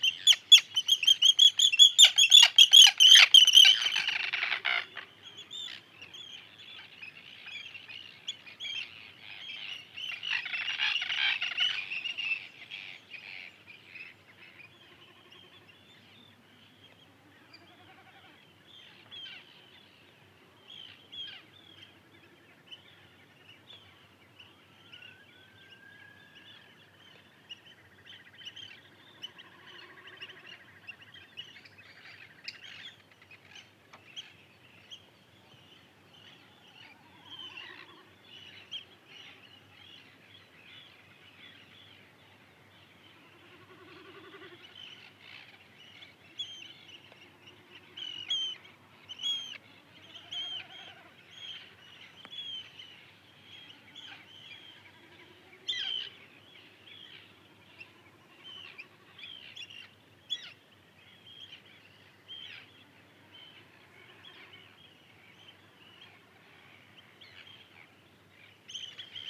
Arnarstapavegur, Arnarstapi, Iceland - Local Birds, Summer, Morning time
Local Birds, Summer, Morning time